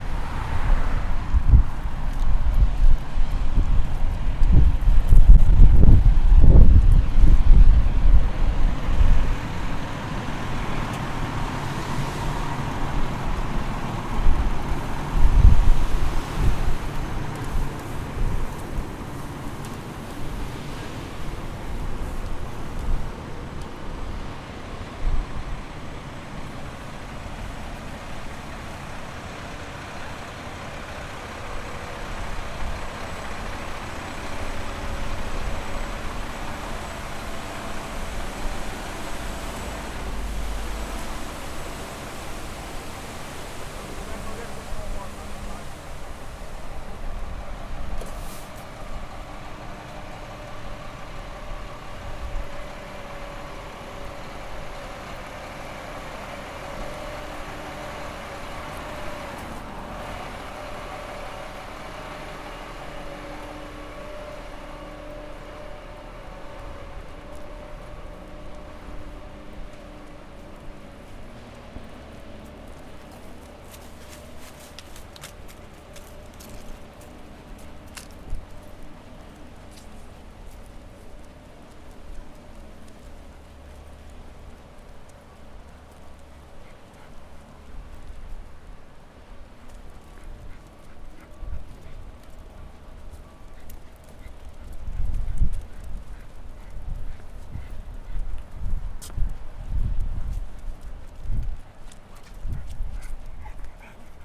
The Glebe, Ottawa, ON, Canada - Walking by a Construction Zone
Recorded with an H5 portable microphone by four cement mixing trucks in a residential are. It was an extremely windy day, so it wasn't optimal conditions for recording.
24 October 2016